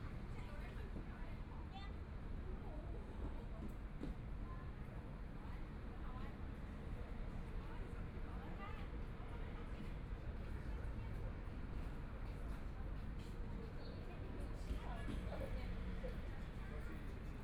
YiTong Park, Taipei - Sitting in the park
Children and adults, Environmental sounds, Motorcycle sound, Traffic Sound, Binaural recordings, Zoom H4n+ Soundman OKM II